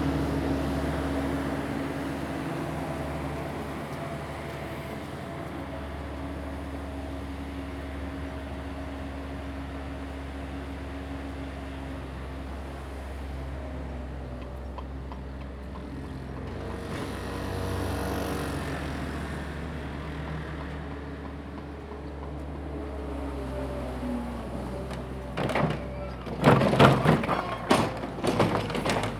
黃厝, Lieyu Township - Small village
Birds singing, Small village, Construction Sound
Zoom H2n MS +XY